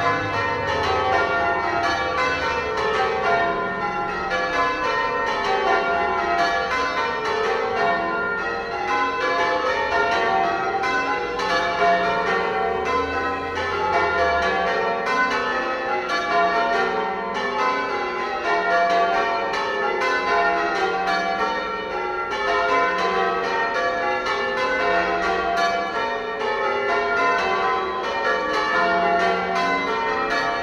{
  "title": "Bell-ringing practice, St. Giles, Reading, UK - Old bells in a new town",
  "date": "2017-05-10 20:33:00",
  "description": "There are eight bells in the tower at St. Giles, dating back to 1793. The youngest bell was made in 1890. I adore knowing that this sound connects me to past listeners in Reading, who would have also heard the glorious sound of the bells ringing. For a long time I had thought bell ringing practice was on Thursdays, but now I know it's Wednesday, I can be sure to listen in more regularly. I love the density of microtones, semitones, harmonics and resonances in the sounds of the bells ringing, and the way they duet with the ebb and flow of traffic on Southampton Street. I was right under the tower making this recording, with my trusty EDIROL R-09.",
  "latitude": "51.45",
  "longitude": "-0.97",
  "altitude": "43",
  "timezone": "Europe/London"
}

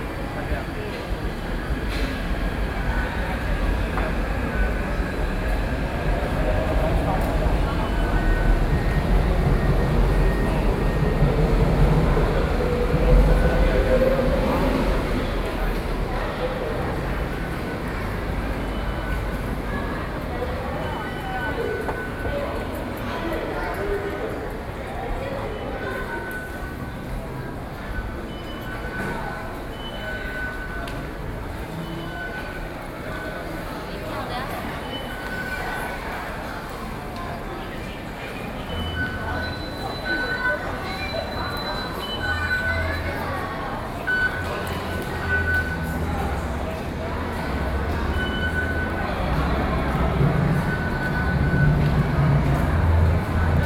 {
  "title": "Yuanshan, Taipei - Walking out of the MRT station",
  "date": "2012-10-27 16:18:00",
  "latitude": "25.07",
  "longitude": "121.52",
  "altitude": "9",
  "timezone": "Asia/Taipei"
}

